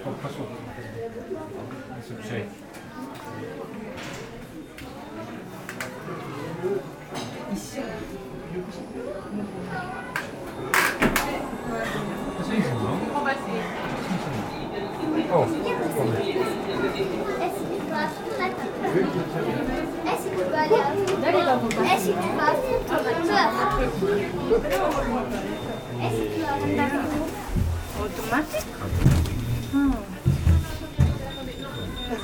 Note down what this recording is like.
Using the funicular located in the city called Le Tréport. Its a huge funicular using small funny cabins. Everything is free, you can use it as a lift and theres a great view. During this recording, people wait and gradually, we embark in the funicular.